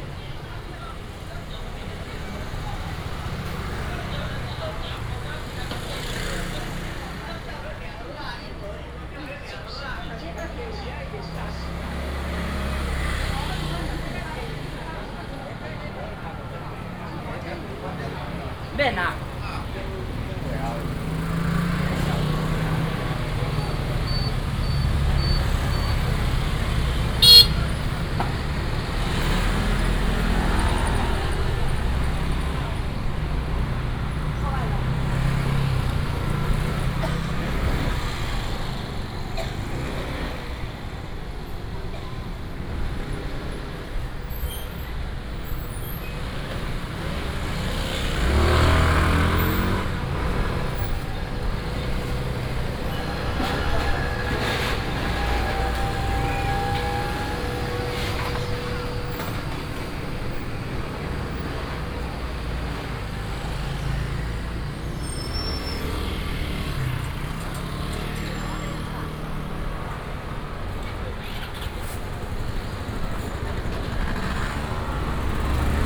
Nantian Rd., East Dist., Chiayi City - Walking through the traditional market
Walking through the traditional market, Traffic sound, Bird sound, Many motorcycles
Chiayi City, Taiwan